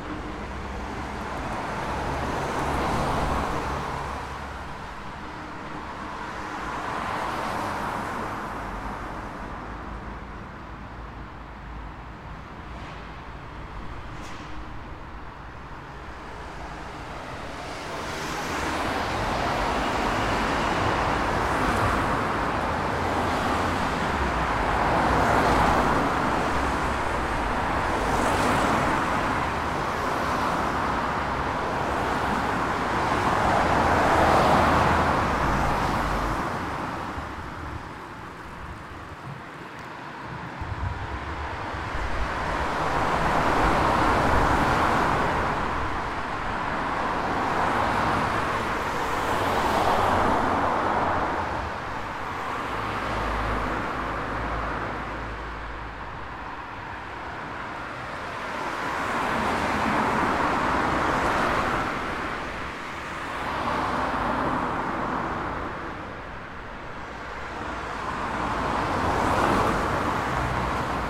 Lemsdorfer Weg, Magdeburg, Germany - Traffic in highway underpass
Recorded with a Tascam DR-07, cold weather, rush hour traffic.
Sachsen-Anhalt, Deutschland, 3 December, 15:30